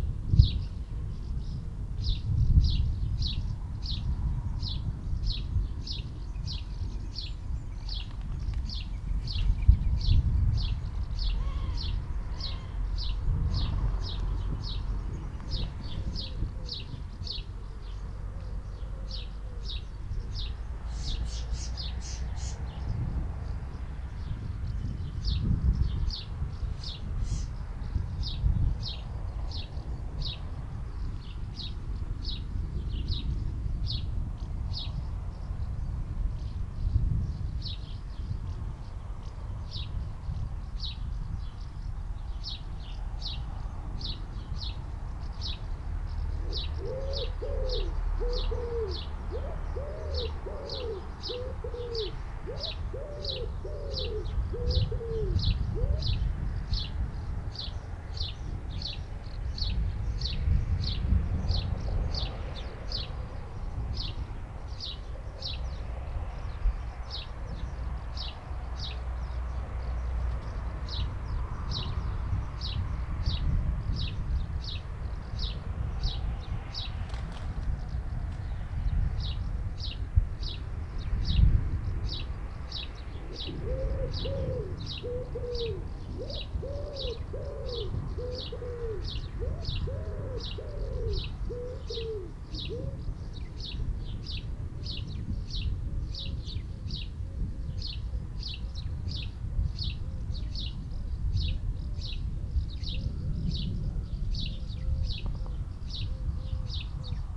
Chapel Fields, Helperthorpe, Malton, UK - approaching thunderstorm ...
approaching thunderstorm ... mics through pre-amp in a SASS ... traffic noise etc ... bird calls ... collared dove ... house sparrow ... tree sparrow ... wood pigeon ... house martin ... starling ...